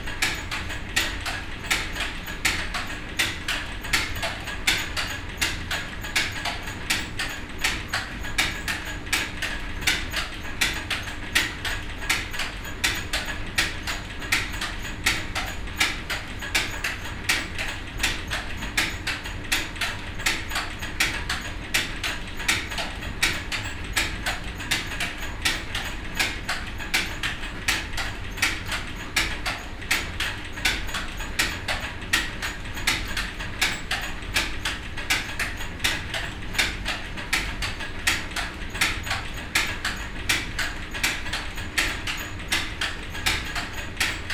enscherange, rackesmillen, belt drive
Inside the historical mill, in a room at the ground floor directly behind the mills running water wheel. The sound of the bell drive running with a constructed imbalance here that operates the mechanics in the first floor.
Endcherange, Rackesmillen, Riemenantrieb
Innerhalb der Mühle in einem Raum im Erdgeschoss direkt hinter dem laufenden Wasserrad. Die Känge des Riemenantriebs der hier mit einer Unwucht konstruiert die Maschinerie im 1. Stock antreibt.
La roue du moulin. Le bruit de l’eau qui s’accumule dans les espaces de la roue à aubes et qui commence à actionner la roue.
Kiischpelt, Luxembourg, 23 September 2011, 19:09